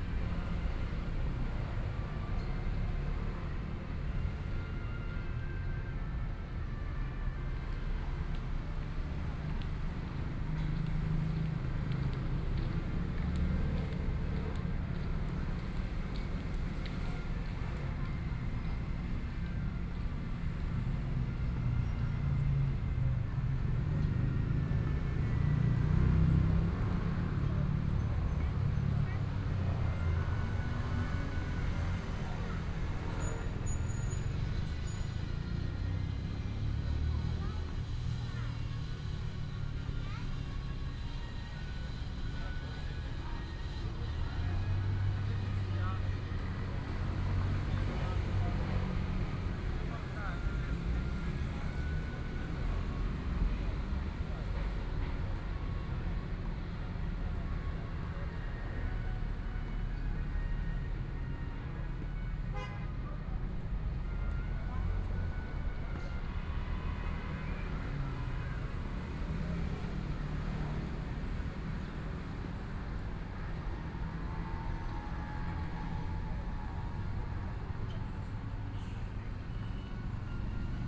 內湖區湖濱里, Taipei City - Sitting in the park
Sitting in the park, Traffic Sound, Construction noise
Binaural recordings